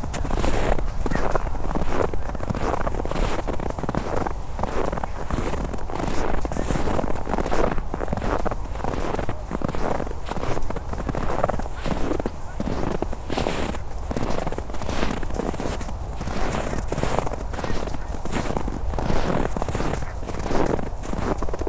berlin, tempelhofer feld: grasland - the city, the country & me: grassland
cold and windy afternoon (-10 degrees celsius), snow walk, steps in the snow
the city, the country & me: december 4, 2010
Berlin, Germany, 4 December, ~15:00